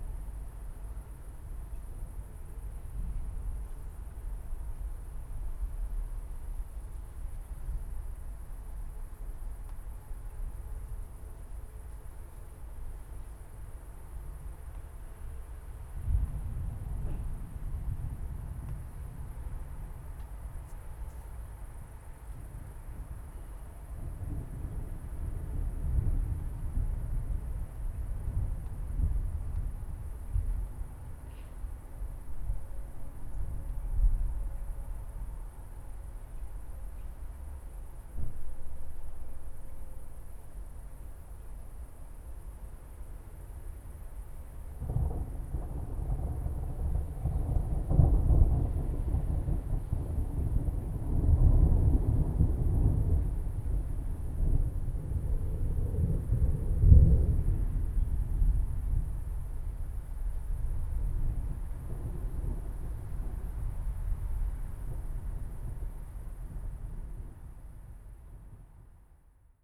summer evening. thunderstorm rumbling far in the distance. no lightning. just distant murmur. moderate cricket activity. (roland r-07)